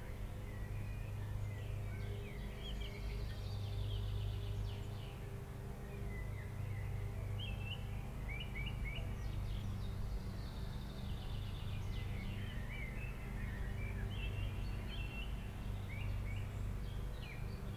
4901, Lithuania, in the tube
some tube blocking the path to the wood. I had only iOgone and Sennheiser Ambeo headset with me, so I placed ambeo mics inside the tube to reveal inner resonances